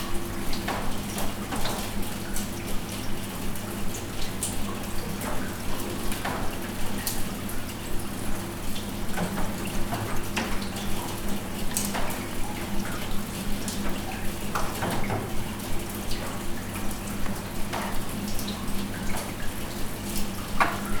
Innstraße, Innsbruck, Österreich - Raindrops in the courtyard
vogelweide, waltherpark, st. Nikolaus, mariahilf, innsbruck, stadtpotentiale 2017, bird lab, mapping waltherpark realities, kulturverein vogelweide, dripping rain from rooftop